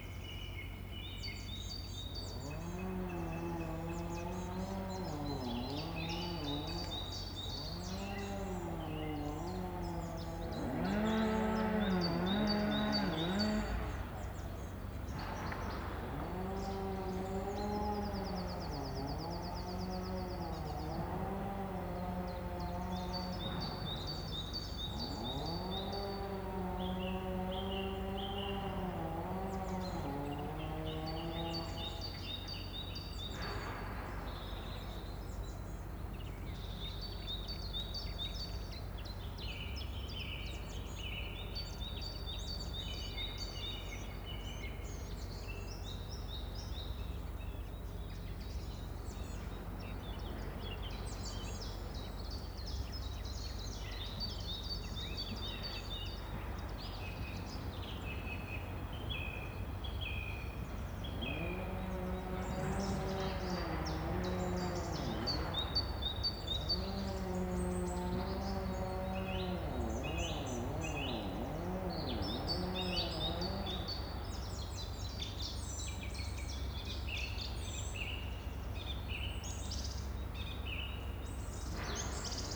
near Allrath, Germany - Chain saws reverberate amongst trees
Mid distance chain saws felling trees. Birds include chiffchaff, great tit, chaffinch, song thrush.
April 2012